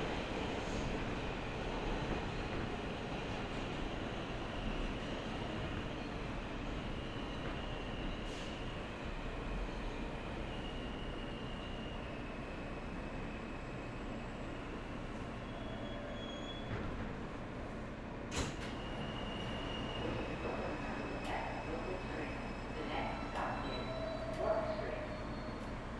Delancey St, New York, NY, USA - Fast car, fast train
Recording made inside Delancey Street/Essex Street station.
A man is singing the "Fast Car" while the F train approaches.
United States, 7 May 2021, 11:00